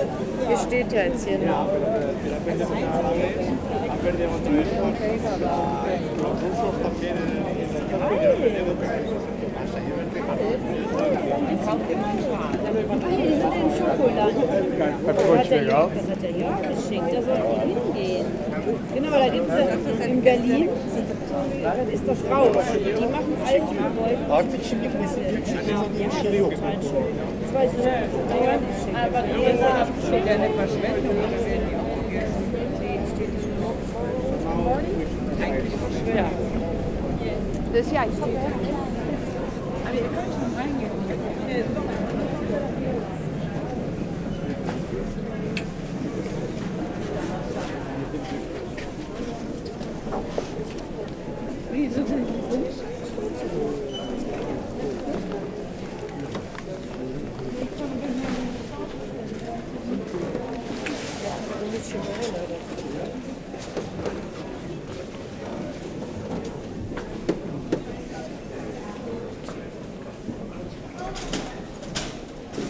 Maastricht, Pays-Bas - Librairy in a church
Boekhandel Dominicanen. A desecrated church is transformed into a vast library and in the apse, to a bar. It's rare enough (and what a decay) to highlight the sound of this kind of place. Walk in the establishment, elsewhere diehard. In 2008, the bookstore was ranked first in the ranking of the ten most beautiful bookstore in the world.